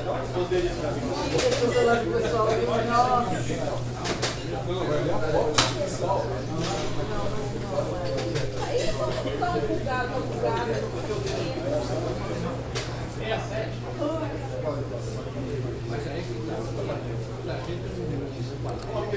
#soundscape #paisagemsonora #padaria #bakery #saopaulo #sp #brazil #brasil